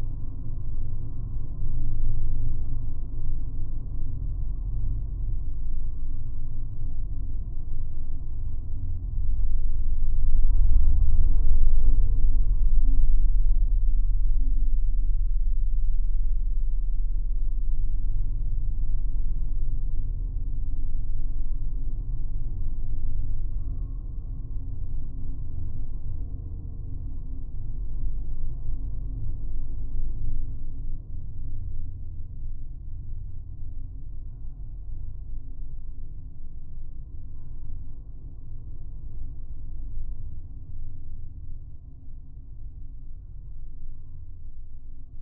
View tower listened through geophone
Utenos apskritis, Lietuva, 8 May 2021, 18:20